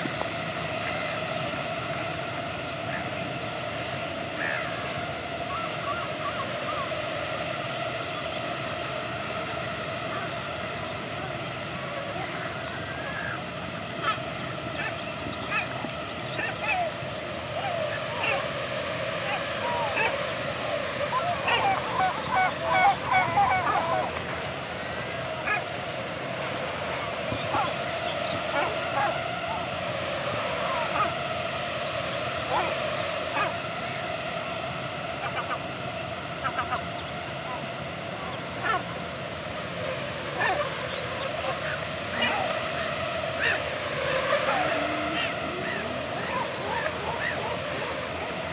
Les Portes-en-Ré, France - Mosquitos cloud
Between some old abandoned salt marshes, a mosquitoes cloud. More exactly, it's a gnat cloud, carried away by the wind.